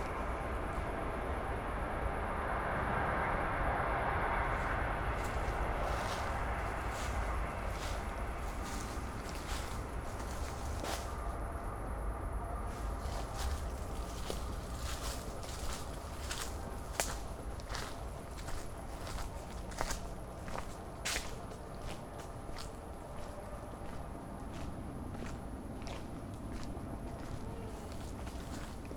Berlin Buch, Pankeplatz, bridge over almost silent river Panke, walking direction S-Bahn station on a Sunday evening in early September
(Sony PCM D50, Primo EM272)
Deutschland